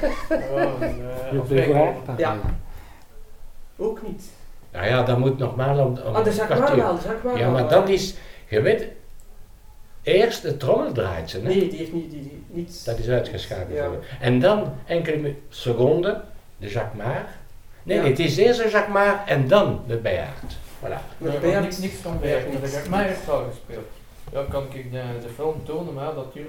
Louvain, Belgique - Jacques Sergeys
Talking about the Leuven bells in the house of Jacques Sergeys, a former bellfounder. In this place, people speak dutch, but Jacques is perfectly bilingual.